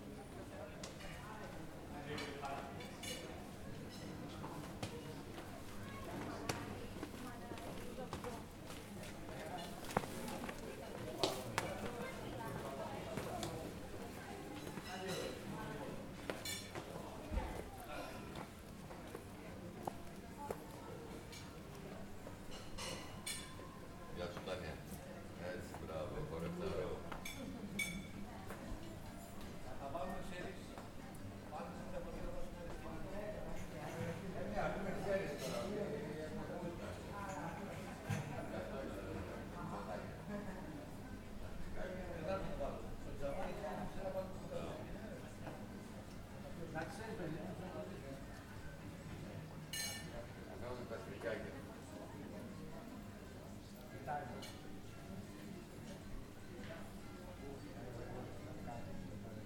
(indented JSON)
{
  "title": "Agios Nikolaos, Corfu, Greece - Agiou Nikolaou Square - Πλατεία Αγίου Νικολάου (Σκαλινάδα του Άγιου Σπυρίδωνα)",
  "date": "2019-04-16 14:04:00",
  "description": "People chatting, eating and passing by.",
  "latitude": "39.63",
  "longitude": "19.92",
  "altitude": "23",
  "timezone": "Europe/Athens"
}